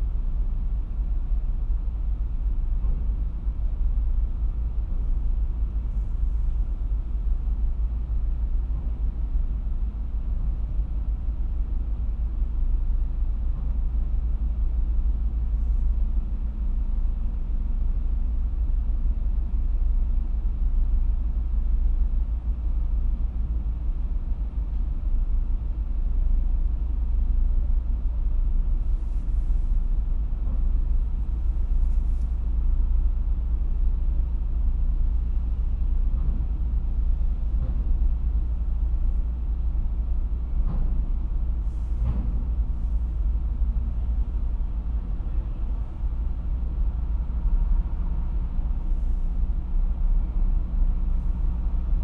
{
  "title": "Pier Office, Glenegedale, Isle of Islay, UK - ferry ... leaving ...",
  "date": "2018-05-19 09:20:00",
  "description": "Kennecraig to Port Ellen ferry to Islay ... disembarking ... lavalier mics clipped to baseball cap ...",
  "latitude": "55.63",
  "longitude": "-6.19",
  "altitude": "5",
  "timezone": "Europe/London"
}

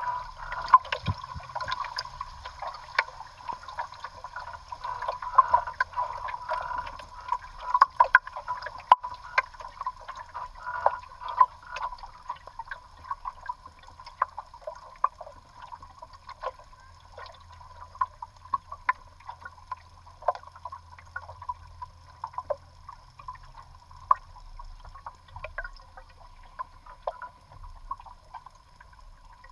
{
  "title": "Dreverna, Lithuania, underwater",
  "date": "2022-07-20 10:45:00",
  "description": "Hydrophone in Greverna port, some small motor boat arrives",
  "latitude": "55.52",
  "longitude": "21.23",
  "altitude": "8",
  "timezone": "Europe/Vilnius"
}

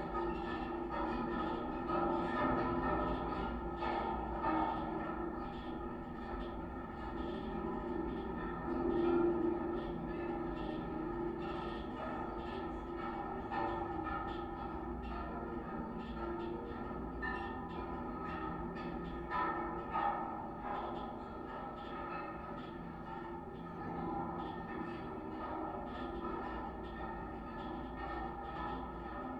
{
  "title": "Mindunai, Lithuania, watchtower",
  "date": "2015-10-17 13:35:00",
  "description": "highest (36 m) lithuanian public watctower heard through contact mics",
  "latitude": "55.22",
  "longitude": "25.56",
  "altitude": "160",
  "timezone": "Europe/Vilnius"
}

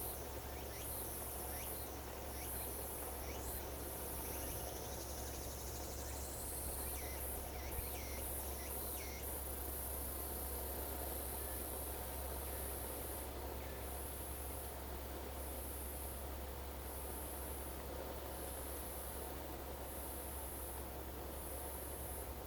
{
  "title": "長興, Fuxing Dist., Taoyuan City - sound of birds",
  "date": "2017-08-14 15:24:00",
  "description": "Near the reservoir in the woods, The sound of birds, Zoom H2n MS+XY",
  "latitude": "24.80",
  "longitude": "121.31",
  "altitude": "288",
  "timezone": "Asia/Taipei"
}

